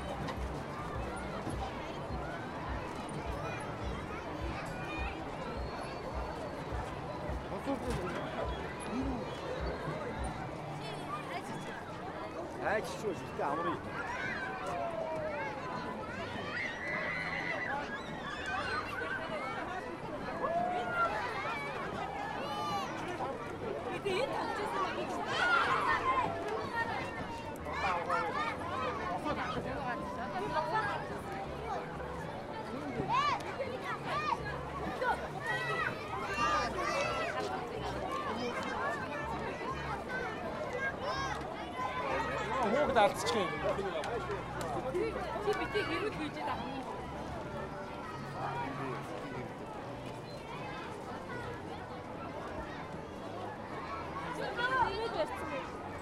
National amusement park, Ulaanbaatar, Mongolei - air bike
a walk under the air bike of the amusement park, play grounds and water games are audible too and especially the music and anouncements of the park out of loudspeakers that are camouflaged as stones - quite nice installation